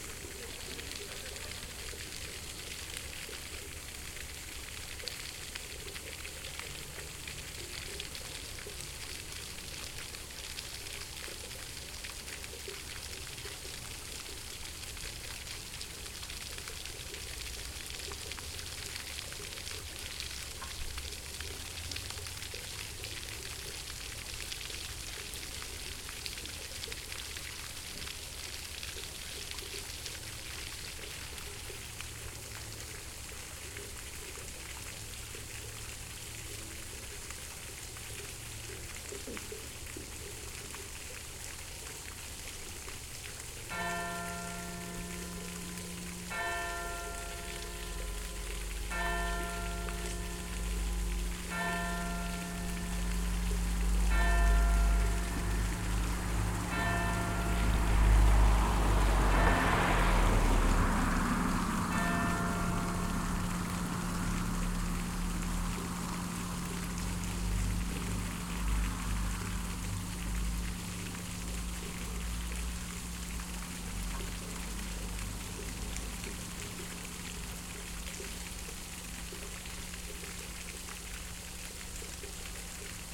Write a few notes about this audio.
Manheim, village center, on a a bench near a fountain, church bells at 8pm. Manheim will dissappear from 2020 on because of the expanding opencast mining north, Tagebau Hambach. (Sony PCM D50, DPA4060)